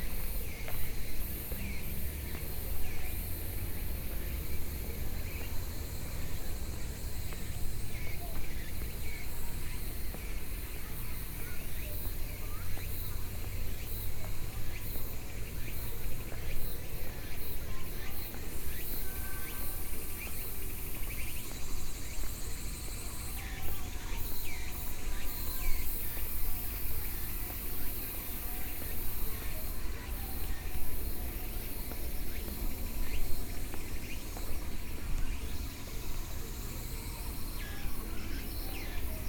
Birdsong, Gradually go down, Sony PCM D50 + Soundman OKM II